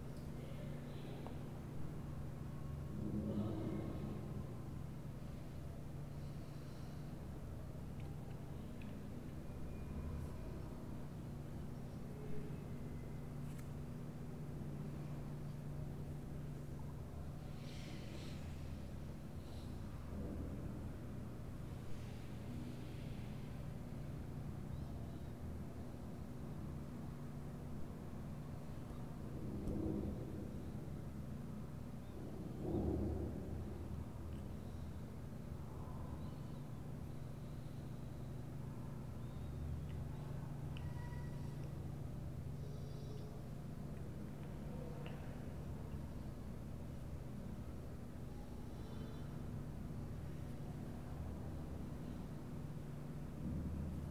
Av Wallace Simonsen - Nova Petrópolis, São Bernardo do Campo - SP, 09771-120, Brasil - Building ladder
This is a building ladder recorded at the second floor of a 25 floor building. It was recorded by a Tascam DR-05.